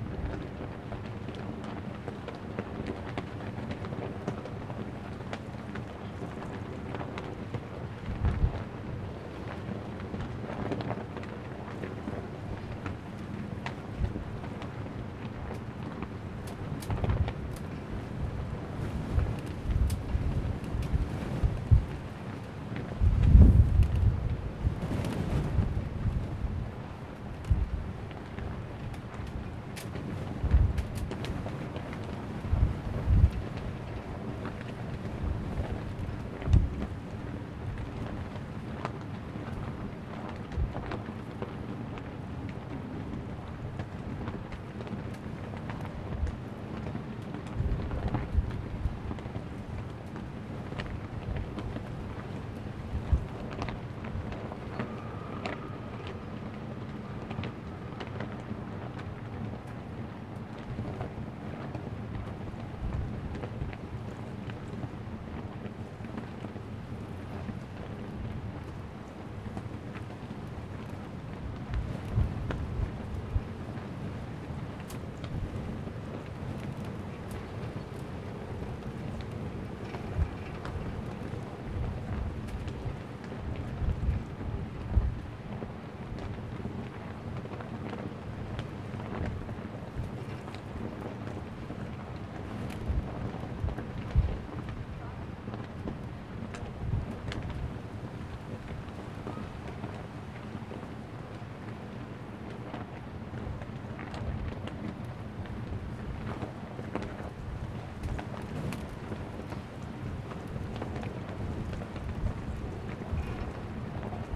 {"title": "Sharjah - United Arab Emirates - Very large flag in the wind and rain", "date": "2017-02-08 14:30:00", "description": "Another windy day in UAE so I recorded the 7th largest flagpole in the world (123 metres). Zoom H4N (sadly became broken on this trip!)", "latitude": "25.35", "longitude": "55.38", "timezone": "Asia/Dubai"}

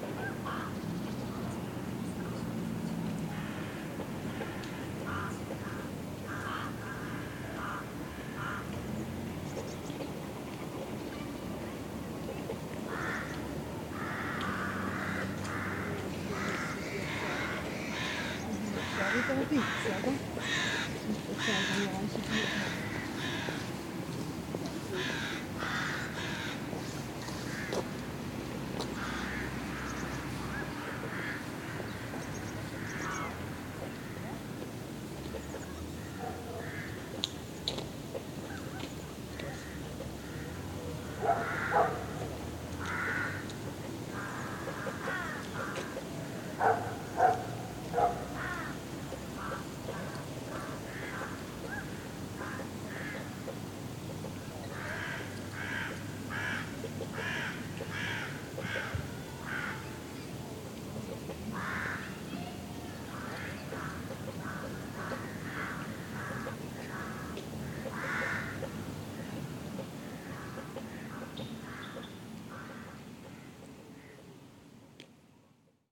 {"title": "Oberer Batterieweg beim Wasserturm, Basel-Bottmingen, Schweiz - Batterie Park", "date": "2001-01-01 11:30:00", "description": "excited craws, walkers, dogs and some background traffic on a sunday morning", "latitude": "47.53", "longitude": "7.59", "altitude": "368", "timezone": "Europe/Zurich"}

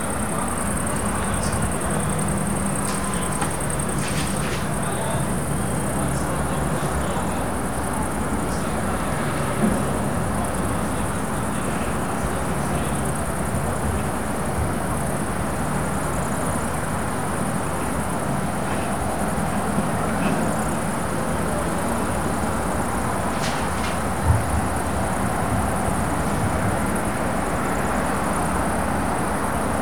{"title": "Poznan, balcony - cicadas during soccer game", "date": "2014-07-05 22:12:00", "description": "walking out to take a breather on a muggy evening. cicadas swarm the wild field, snatches of a soccer game commentary sneaking in from the apartment. sleepy night ambience over the Jana III Sobieskiego housing estate.", "latitude": "52.46", "longitude": "16.90", "timezone": "Europe/Warsaw"}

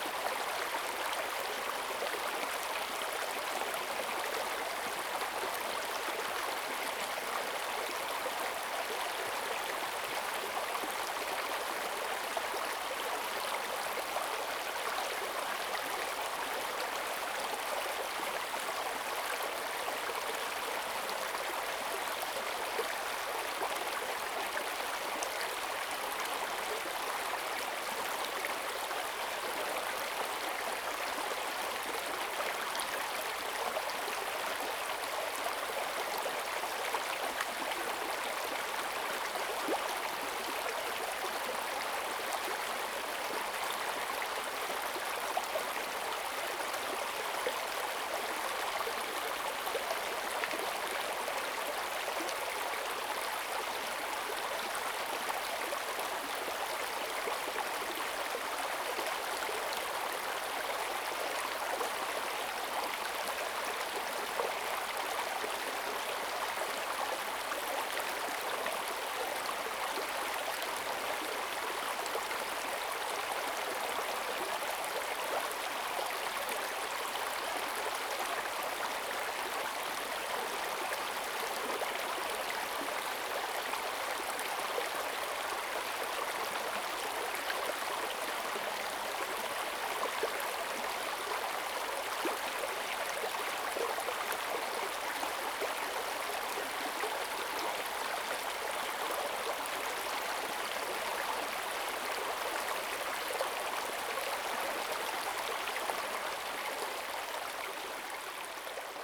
{"title": "種瓜坑溪, 成功里, Puli Township - Upstream", "date": "2016-04-28 10:43:00", "description": "streams, Small streams\nZoom H6 XY", "latitude": "23.96", "longitude": "120.89", "altitude": "464", "timezone": "Asia/Taipei"}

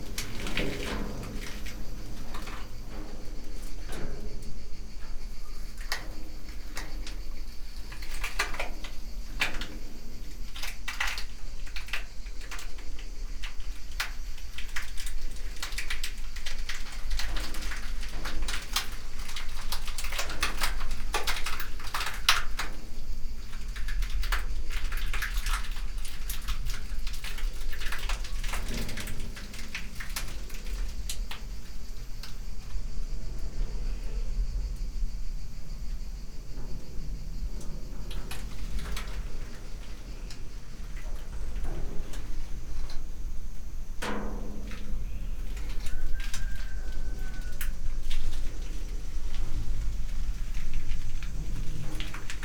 {"title": "quarry, metal shed, Marušići, Croatia - void voices - stony chambers of exploitation - metal shed", "date": "2013-07-19 16:52:00", "description": "trying to quietly step on very dry leaves", "latitude": "45.41", "longitude": "13.74", "altitude": "267", "timezone": "Europe/Zagreb"}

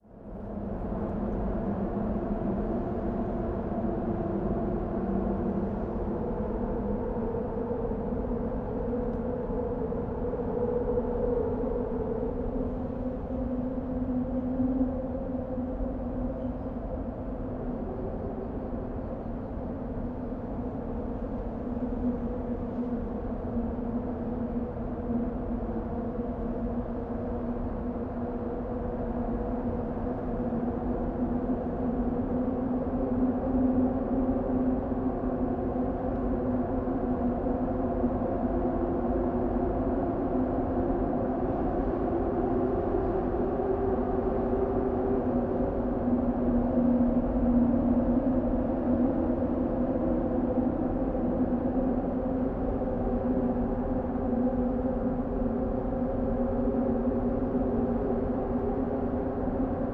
{"title": "Lisbon, Ponte 25 de Abril - in container", "date": "2010-07-03 14:15:00", "description": "sound of bridge in a container under the bridge, which is possibly part of an art installation.", "latitude": "38.70", "longitude": "-9.18", "altitude": "10", "timezone": "Europe/Lisbon"}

